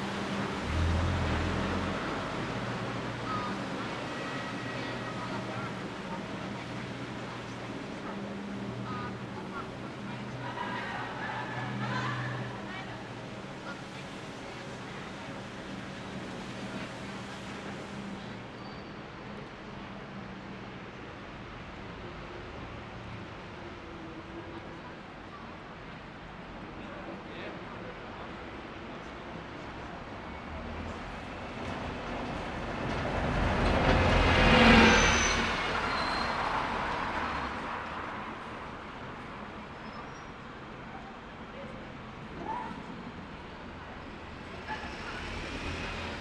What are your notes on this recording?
Recording in a common space of shoppers, there are still buses, much fewer people walking in the space, and essential shops closing for the evening. This is five days after the new Lockdown 2 in Belfast started.